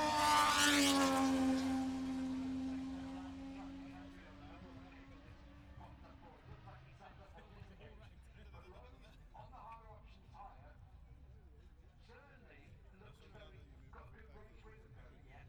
moto two free practice three ... copse corner ... dpa 4060s to Zoom H5 ...
East Midlands, England, United Kingdom, 28 August 2021, ~11:00